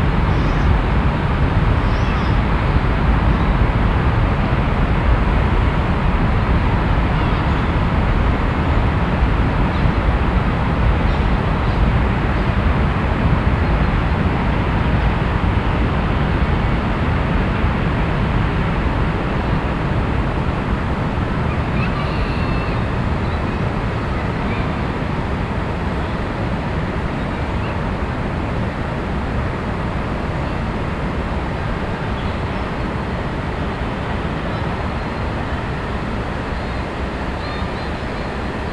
cologne, stadtgarten, park mitte west
stereofeldaufnahmen im september 07 mittags
project: klang raum garten/ sound in public spaces - in & outdoor nearfield recordings
May 2008